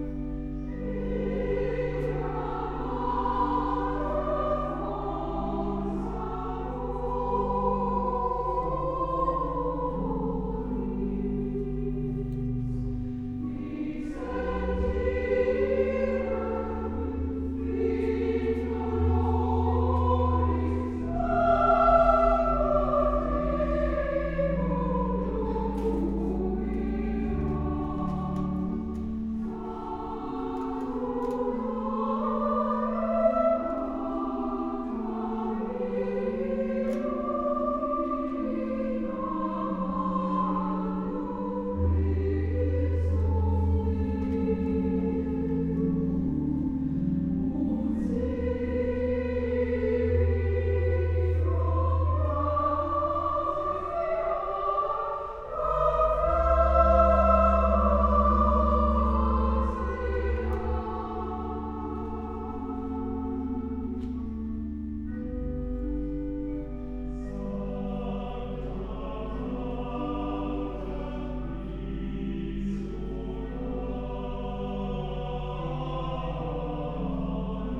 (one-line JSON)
{"title": "St.Nikolai, Altstadt Spandau, Berlin, Deutschland - choir concert", "date": "2016-03-06 18:15:00", "description": "public choir concert at St.Nikolai church, Berlin Spandau\n(Sony PCM D50, Primo EM172)", "latitude": "52.54", "longitude": "13.21", "altitude": "36", "timezone": "Europe/Berlin"}